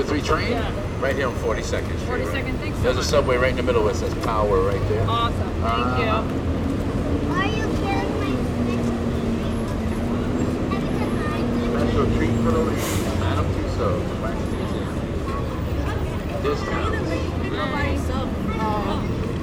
Broadway, New York, NY, USA - Discounts to Madame Tussauds
Street announcer selling discount tickets to Madame Tussauds Wax museum.
"Nothing else matters in this city. Stop walking aimlessly."
"Better than living in New Jersey, I can tell you that much."
28 August 2019, 01:00